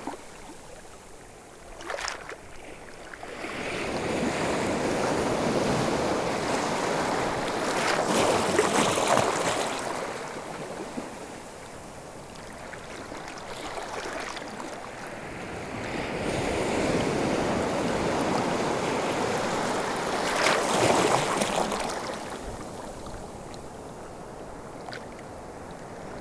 La Tranche-sur-Mer, France - The sea
Recording of the sea into a rocks breakwater.
23 May 2018, 16:00